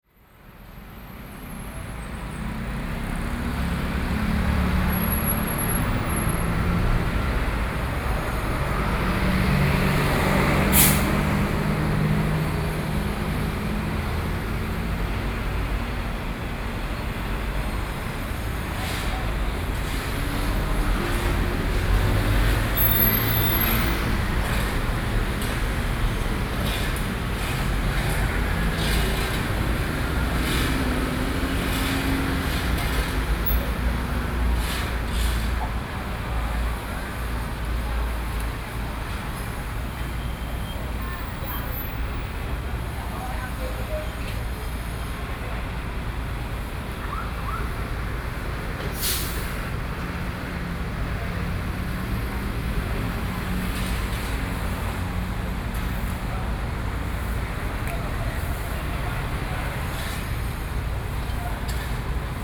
Chiayi Bus Stop - Commuting time street
Commuting time street, Sony PCM D50 + Soundman OKM II
Xi District, Chiayi City, Taiwan, 26 July 2013, 17:36